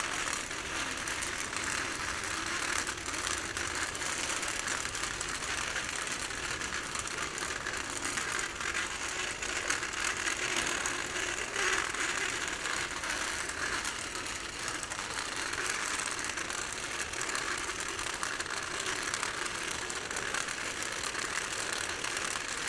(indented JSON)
{
  "title": "Lexington Ave, New York, NY, USA - A Walker without Wheels",
  "date": "2022-03-21 11:20:00",
  "description": "A senior is moving along the sidewalk with a walker missing two wheels producing this sound of metal scraping the concrete.",
  "latitude": "40.75",
  "longitude": "-73.98",
  "altitude": "14",
  "timezone": "America/New_York"
}